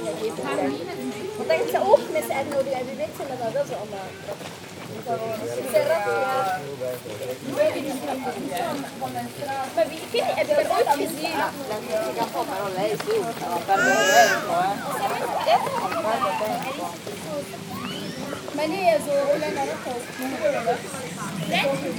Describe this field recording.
In a quiet park, wind in the sycamores and some young people discussing.